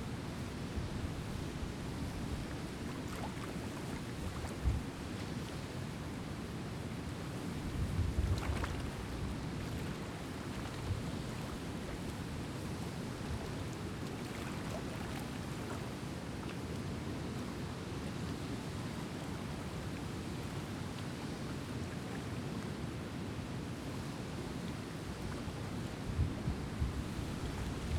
Recorded at the bottom of the dam near the river. The roar of the dam can be heard to the right and the water lapping against the rocks can be heard directly in front . I hove the recorder a couple times during the recording
Saint Croix County, Wisconsin, United States, 23 March 2022, ~13:00